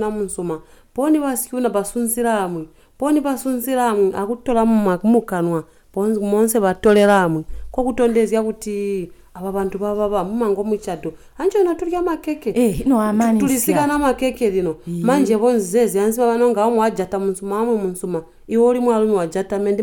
...during the second day of our workshop, we talked at length about the culture among the Batonga and how it is passed on traditionally especially among women and children… in one of the one-to-one training sessions Lucia and Eunice record this beautiful conversation exchanging about what they learnt from their grandmothers…
a recording made during the one-to-one training sessions of a workshop on documentation skills convened by Zubo Trust; Zubo Trust is a women’s organization bringing women together for self-empowerment.